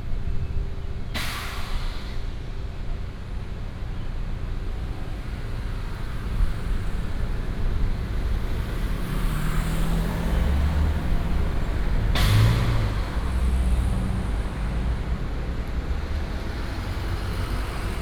石化二路12號, Linyuan Dist., Kaohsiung City - Next to the factory
Next to the factory, traffic sound
Kaohsiung City, Taiwan, 24 April 2018